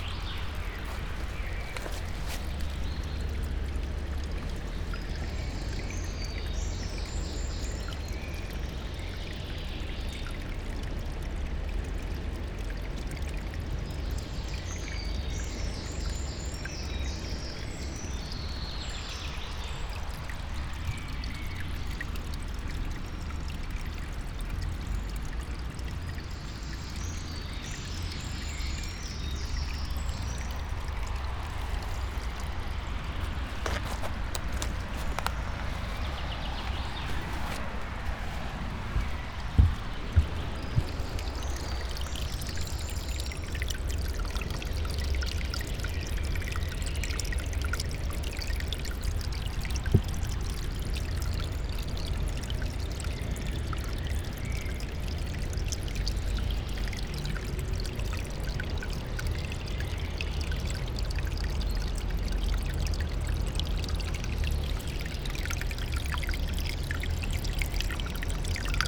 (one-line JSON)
{"title": "river Mura, near Trate - tiny stream, fern seeds ...", "date": "2015-06-20 10:55:00", "description": "several tiny streams of water flows into the river through undergrowth with beautiful fern (summer solstice time), miniature curved sand dunes allover", "latitude": "46.70", "longitude": "15.78", "altitude": "239", "timezone": "Europe/Ljubljana"}